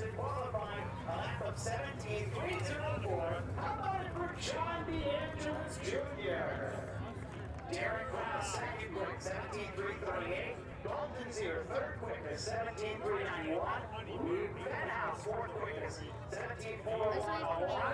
Madison International Speedway - ARCA Midwest Tour Qualifying
Qualifying for the Joe Shear Classic ARCA Midwest Tour Super Late Model Race at Madison International Speedway. The cars qualify one at a time each getting two laps to set a time.
Wisconsin, United States